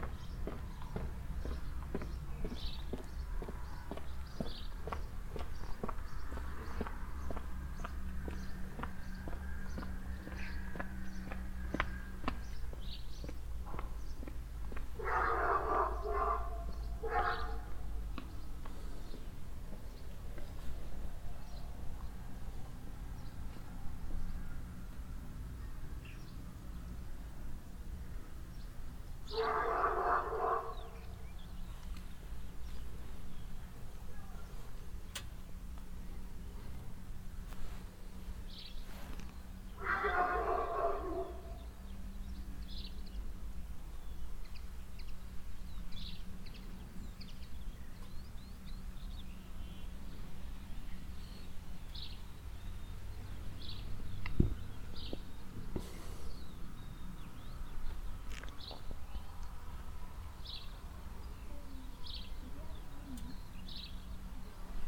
putscheid, town center, siesta silence
In the center of the town at siesta time. Passengers walking by on the footwalk - a distant dog barking, overall birds, a door - a car vanishing in the valley - a boy with a dog.
Putscheid, Ortszentrum, Mittagsruhe
Im Zentrum des Ortes zur Mittagszeit. Leute laufen auf einem Fußweg vorbei - in der Ferne bellt ein Hund, Vögel überall, eine Tür - ein Auto verschwindet im Tal - ein Junge mit einem Hund.
Putscheid, centre-ville, le silence à l’heure de la sieste
En centre-ville à l’heure de la sieste. Des piétons marchent sur le trottoir – un chien aboie dans le lointain, des oiseaux partout – une voiture qui part en direction de la vallée – un enfant avec un chien
Project - Klangraum Our - topographic field recordings, sound objects and social ambiences